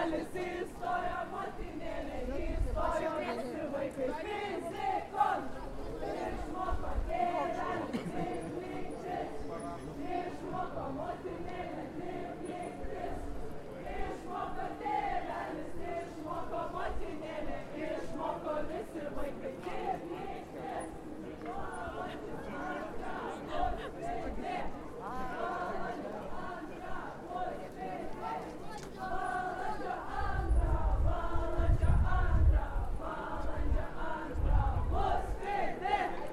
Gedimino pr., Vilnius, Lithuania - Walking through St Casimir FAir
Sounds of the St. Casimir Fair; as I start recording a group of young people start singing in protest at something I"m not quite sure of. they are dressed as dinosaurs. At one point they are met by a group of Hare Krishna's coming the other way and the sounds merge in and out. We end at the vell tower and the cathedral with street music and crowd noise.
Vilniaus apskritis, Lietuva, 5 March 2022